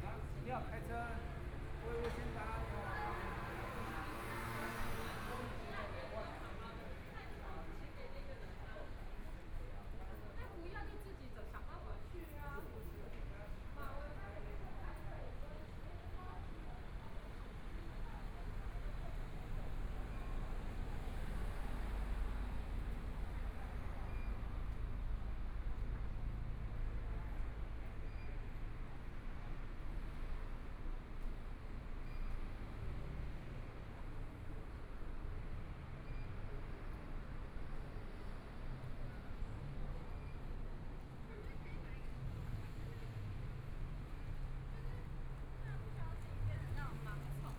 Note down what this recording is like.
walking on the Road, Environmental sounds on the street, Traffic Sound, Please turn up the volume, Binaural recordings, Zoom H4n+ Soundman OKM II